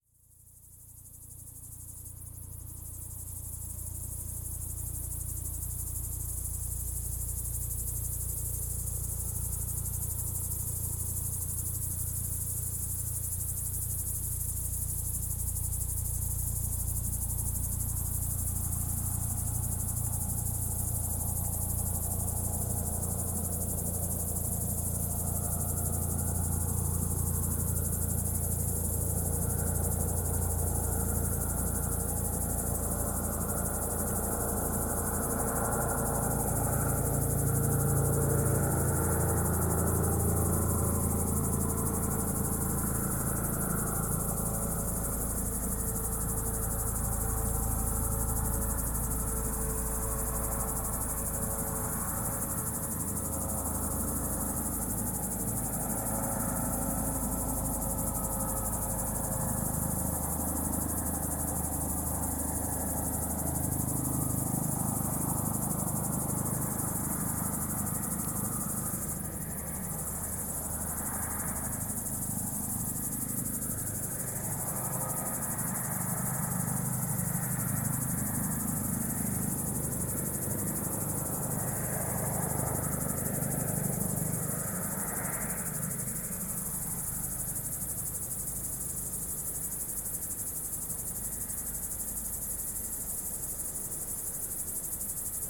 *Binaural - best listening with headphones.
This recording chronicles sounds of nature typical of summer nights juxtaposed against anthrophony. Sounds in the left and right channels exhibit acoustic energies and rhythmical textures.
In the sound: Crickets, soft winds, car engine, wings and voice of an unknown bird.
Gear: Soundman OKM with XLR and Adapter, ZOOM F4 Field Recorder.